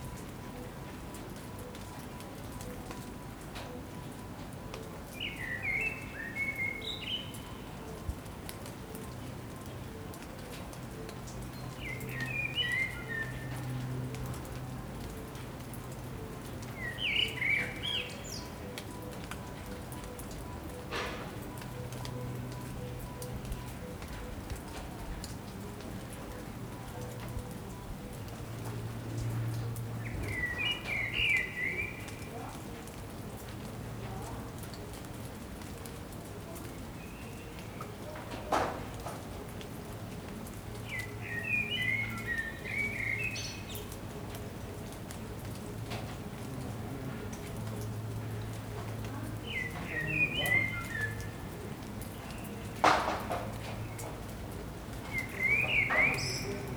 The rain ends at the end of the day, around 6PM during summer 2014, and a bird starts to sing, in a peaceful ambiance in an upper Belleville backyard in Paris. Raindrops keep falling on metal and glass. Distant chuch bell.
Paris, France